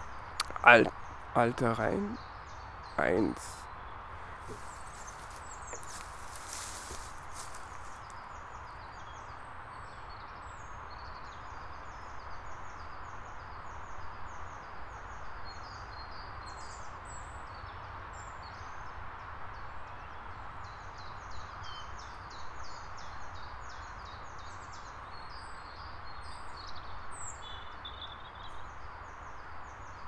Recorded width a DR-100MKII mounted on tripod. Some hikers went by, I was standing beside the recorder, and a friend walked around nearby. There were also some children playing in the woods. The low noise from the autobahn is always in the background at this otherwise beautiful place. In summer there are a lot of people bathing there, but out of the swimming season, just now and then some hikers come by.